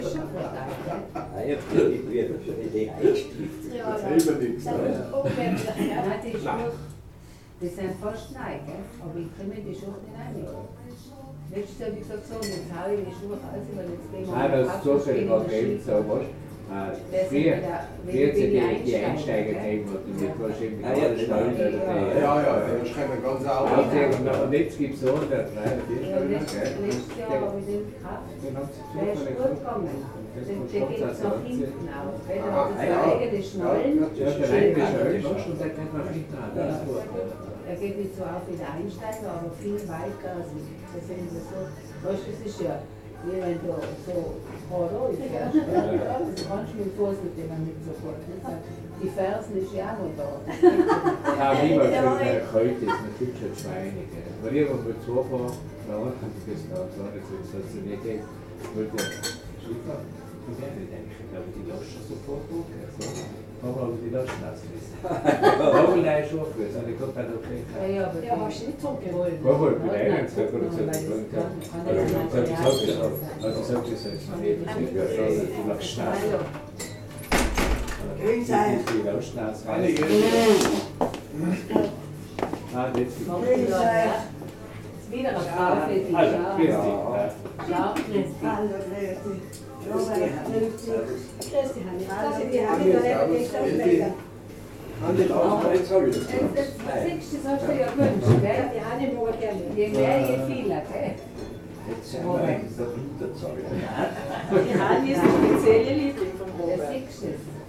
kellerstüberl wild, grillparzerstr. 5, 6020 innsbruck